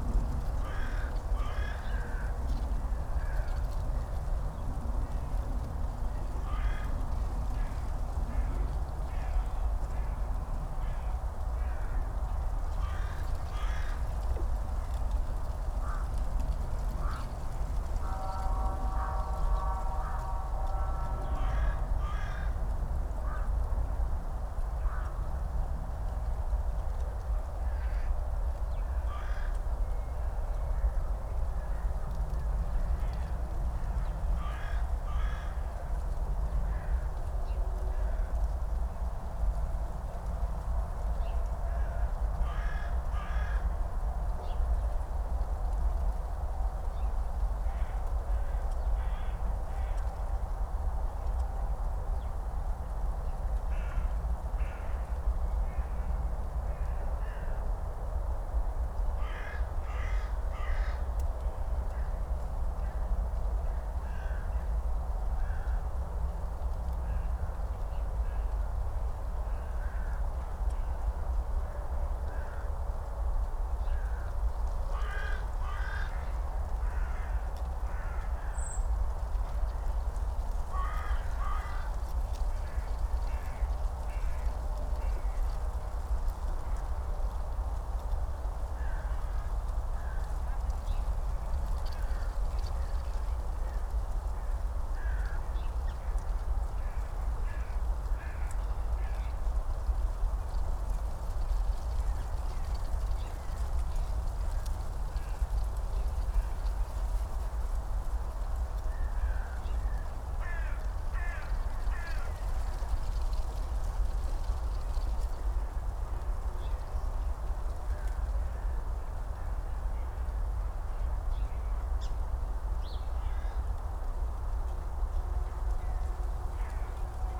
Tempelhofer Feld, Berlin, Deutschland - January afternoon ambience
at the poplars, afternoon in January, a few dun crows, dry leaves in the wind, Autobahn drone
(Sony PCM D50, DPA4060)